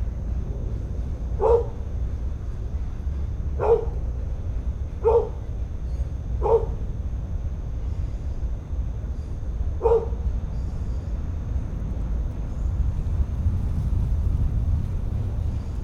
{"title": "backyard ambiance, East Garfield Park - evening, World Listening Day", "date": "2010-07-18 21:41:00", "description": "World Listening Day, WLD, Scoop walking in the weeds next door, barking, freight train passes, wind, whistling, kids screaming, crickets", "latitude": "41.89", "longitude": "-87.71", "altitude": "184", "timezone": "America/Chicago"}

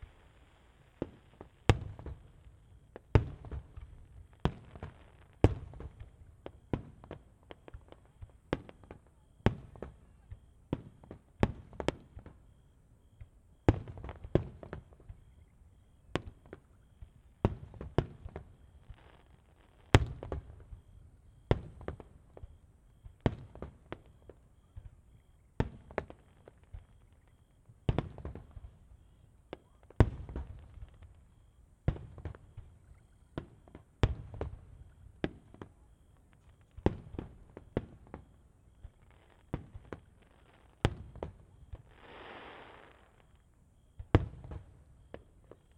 {"title": "Deba, Ritto, Shiga Prefecture, Japan - Yasugawa Fireworks", "date": "2015-07-25 20:15:00", "description": "Yasugawa Fireworks Display (野洲川花火大会), 25 July 2015. Audio-Technica BP2045 microphone aimed north toward fireworks launched over the river. Echo on the left is from an embankment and a Panasonic factory nearby.", "latitude": "35.04", "longitude": "136.02", "altitude": "106", "timezone": "Asia/Tokyo"}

